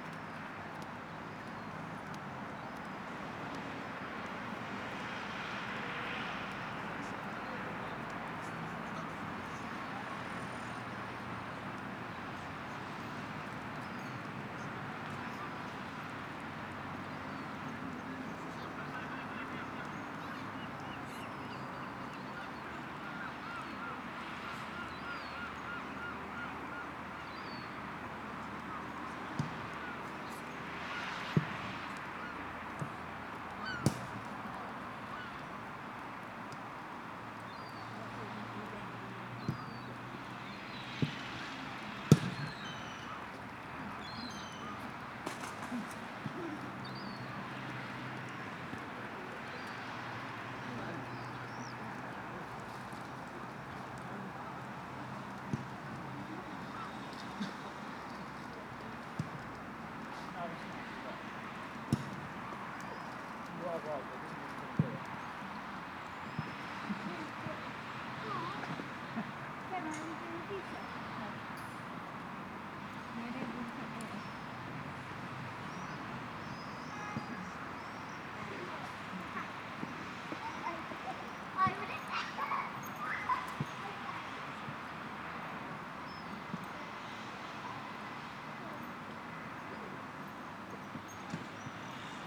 Courtenay Park, Newton Abbot, Devon, UK - World Listening Day 2014
Evening sounds in Courtenay Park, Newton Abbot Devon. Traffic, children playing, dogs running after balls, swifts, herring gulls, bees, voices ...